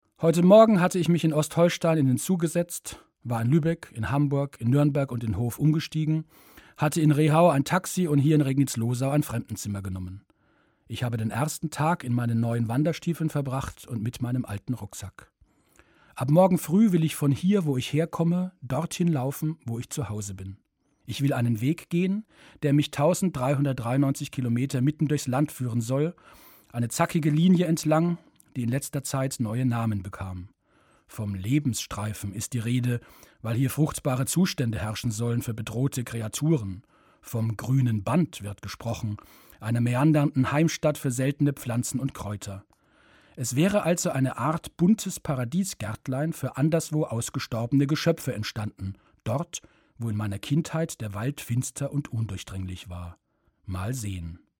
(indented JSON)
{
  "title": "regnitzlosau - gruener baum",
  "date": "2009-08-18 17:27:00",
  "description": "Produktion: Deutschlandradio Kultur/Norddeutscher Rundfunk 2009",
  "latitude": "50.30",
  "longitude": "12.05",
  "altitude": "515",
  "timezone": "Europe/Berlin"
}